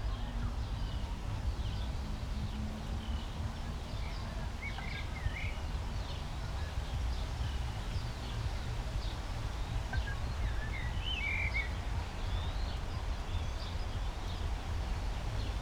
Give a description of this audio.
place revisited in June, (Sony PCM D50, Primo EM172)